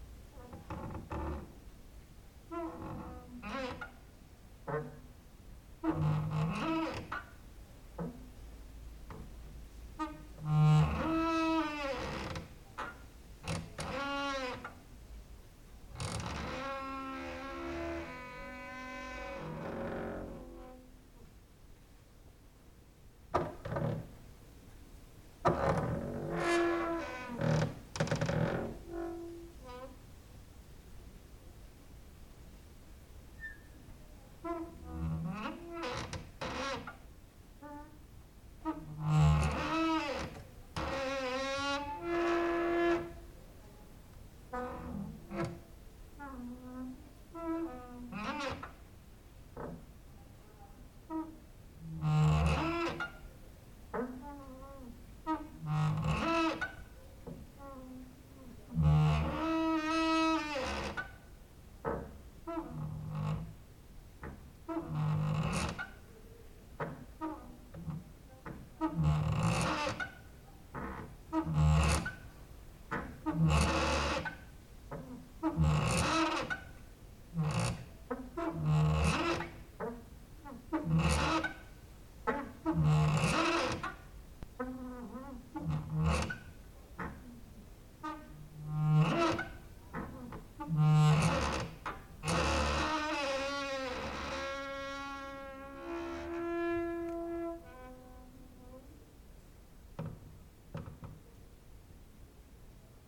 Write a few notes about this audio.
no cricket at that day ... strong wind outside, exercising creaking with wooden doors inside